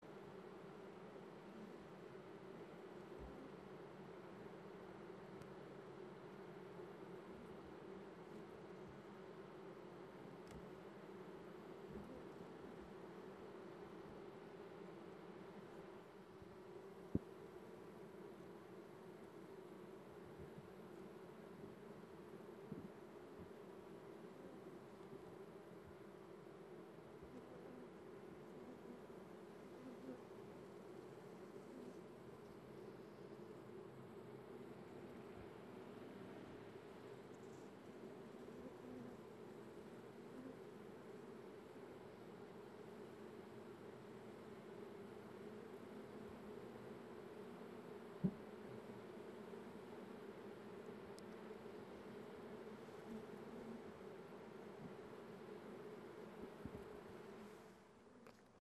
sound of the bees entering a bee hive.. recorded during the annual spring cleaning of the hives
California, United States of America